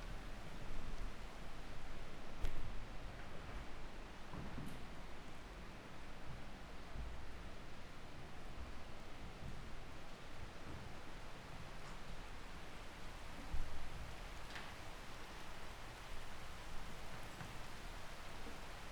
ex Soviet military base, Vogelsang - inside building during thunderstorm
seeking shelter inside building, during thunderstorm
(SD702, MKH8020)
Zehdenick, Germany, June 2017